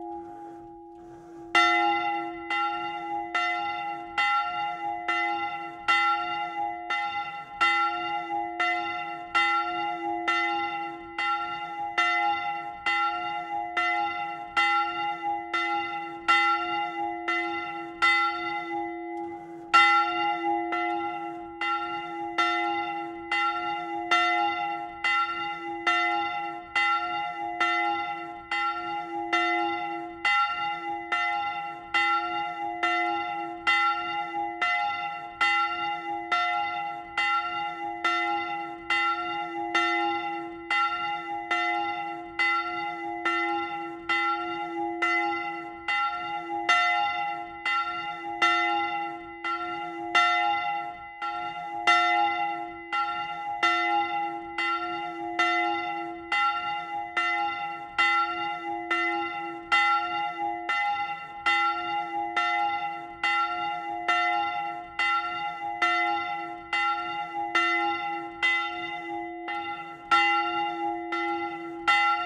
Pl. du Capitaine Nicod, La Sentinelle, France - La Sentinelle - Département du Nord - Église Ste Barbe - Volée
La Sentinelle - Département du Nord
Église Ste Barbe
Volée